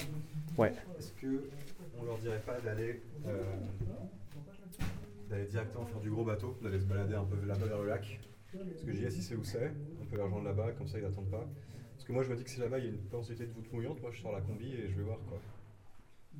We are exploring a flooded underground mine. In first, we cross a tunnel with few water (0:00 to 19:00 mn) and after we are going deep to the end of the mine with boats. It's a completely unknown place. The end is swimming in a deep cold 4 meters deep water, a quite dangerous activity. Because of harsh conditions, the recorder stopped recording. So unfortunately it's an incomplete recording.
Mons, Belgium, 2 December 2017, 17:00